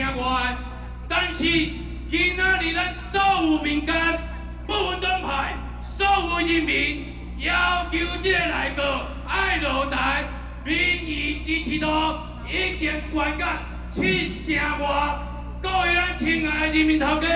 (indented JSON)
{
  "title": "Legislative Yuan, Taiwan - Shouting slogans",
  "date": "2013-10-15 10:24:00",
  "description": "Protest rally, Shouting slogans, Binaural recordings, Sony PCM D50 + Soundman OKM II",
  "latitude": "25.04",
  "longitude": "121.52",
  "altitude": "20",
  "timezone": "Asia/Taipei"
}